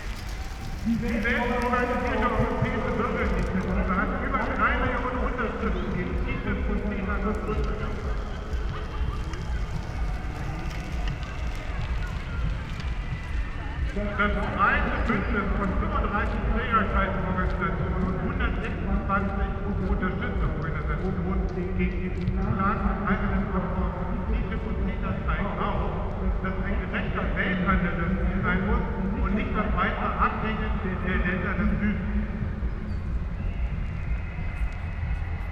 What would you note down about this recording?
distant sounds from the big anti TTIP demonstration, 200000+ people on the streets. heard from a distant place within Tiergarten park. (Sony PCM D50, Primo EM172)